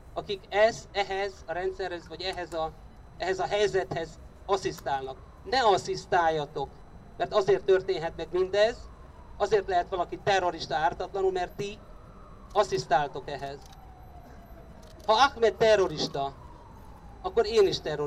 Demonstration for Ahmed, Budapest - Demonstration Speeches for Ahmed
There are three contributions on Hungarian and English: by the Migrant Solidarity Group of Hungary, by Amnesty International and by Arpad Shilling, a director from Budapest.